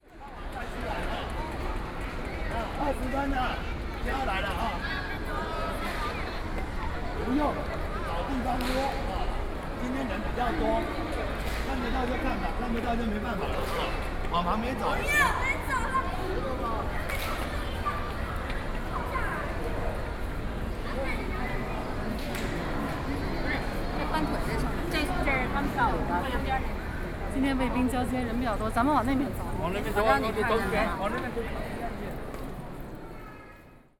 Sun Yat-sen Memorial Hall, Taipei City - Tour groups and tourists